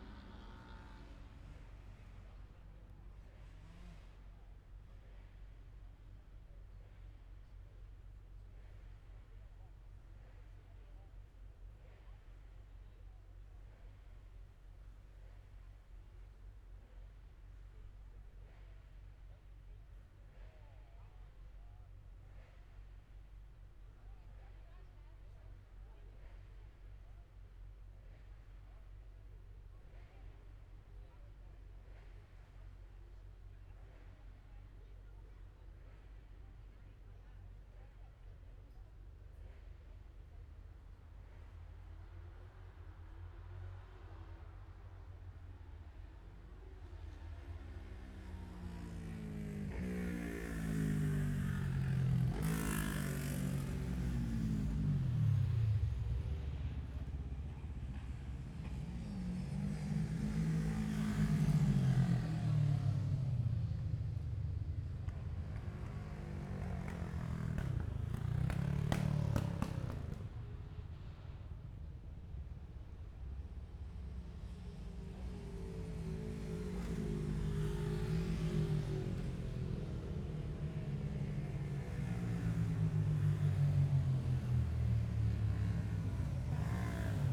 Scarborough District, UK - Motorcycle Road Racing 2016 ... Gold Cup ...
Super lightweights ... 650cc practice ... Mere Hairpin ... Oliver's Mount ... Scarborough ... open lavaliers clipped to base ball cap ...